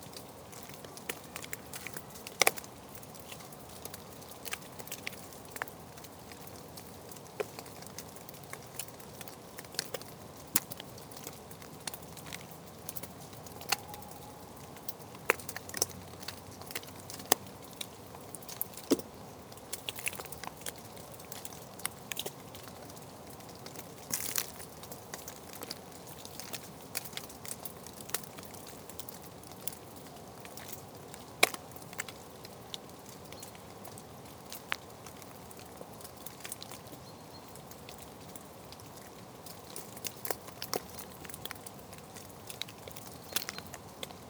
Ottignies-Louvain-la-Neuve, Belgique - Snow melting
At the end of the day, the snow is melting below a majestic beech tree. Recorder hidden in a hole, into the tree, and abandoned alone.
Very discreet : Long-tailed Tit, Common Wood Pigeon, European Green Woodpecker.